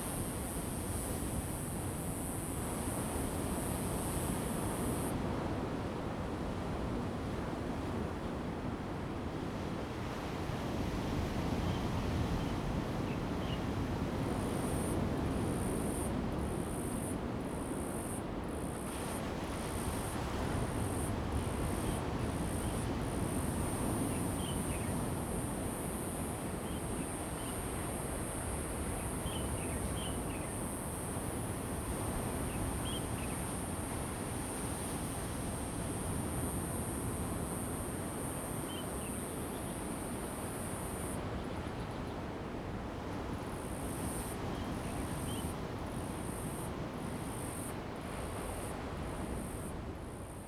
八瑤灣 Bayao bay, Manzhou Township - On the coast
On the coast, wind, Sound of the waves, birds sound
Zoom H2n MS+XY
Pingtung County, Taiwan, 23 April 2018, ~11:00